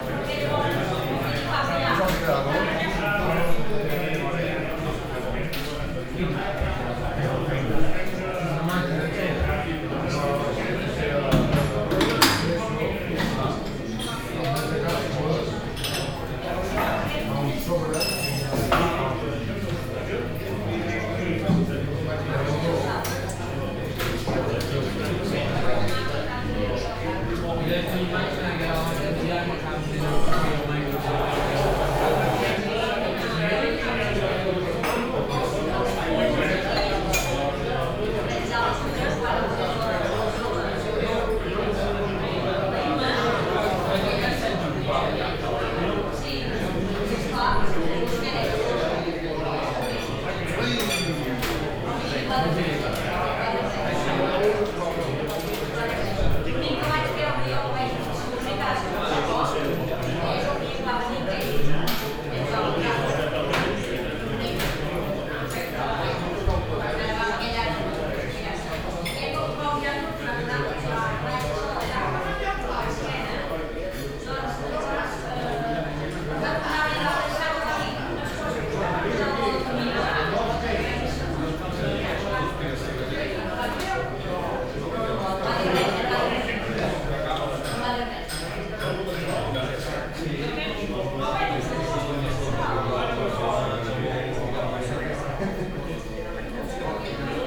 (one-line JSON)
{"title": "SBG, Bar Restaurante Caribe - Jueves", "date": "2011-08-21 14:30:00", "description": "Los jueves hay paella en el menu del Bar Caribe, lo que seguramente tenga algo que ver con que ese día de la semana solamos encontrar el restaurante repleto.", "latitude": "41.98", "longitude": "2.17", "altitude": "866", "timezone": "Europe/Madrid"}